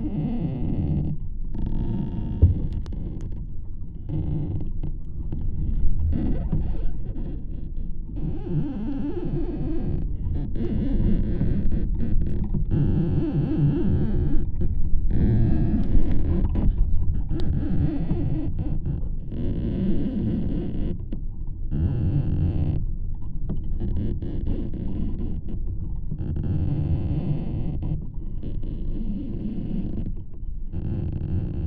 Joneliškės, Lithuania, dead tree 1
Trees rubbing in the wind